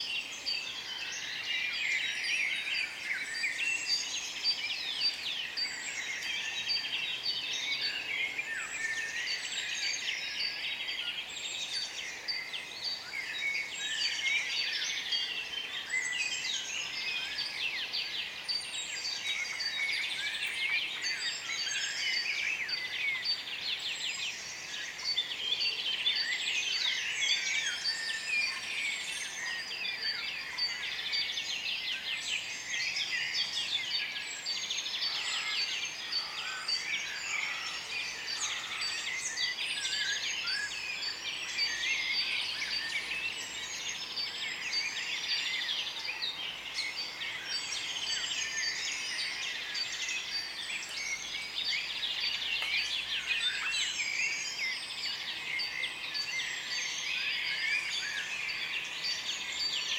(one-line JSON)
{"title": "Goetheallee, Bad Berka, Deutschland - Dawn Chorus #8", "date": "2020-05-20 05:13:00", "description": "*Recording technique: AB\nThis is one of a compilation of field recordings conducted at Dawn in May 2020 in a German spa and wellness city of Bad Berka for the Citizen Science and Arts project \"Dawn Chorus\". Bad Berka is situated in the south of Weimar region in the state of Thuringia.\nThe Citizen Science and Arts Platform #DawnChorus is a project by BIOTOPIA (Bavaria’s new museum of life sciences and environment) and the Nantesbuch Foundation based in the Bavarian foothills of the Alps.\nRecording and monitoring gear: Zoom F4 Field Recorder, RODE M5 MP, AKG K 240 MkII / Beyerdynamic DT 1990 PRO.", "latitude": "50.90", "longitude": "11.29", "altitude": "274", "timezone": "Europe/Berlin"}